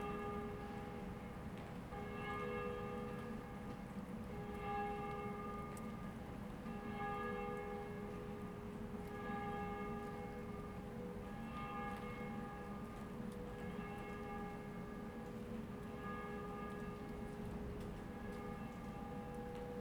"Winter high noon with Des pas sur la neige and final plane in the time of COVID19": soundscape.
Chapter CL of Ascolto il tuo cuore, città. I listen to your heart, city
Monday December 28th 2020. Fixed position on an internal terrace at San Salvario district Turin, more then six weeks of new restrictive disposition due to the epidemic of COVID19.
Start at 11:44 a.m. end at 00:21 p.m. duration of recording 40’53”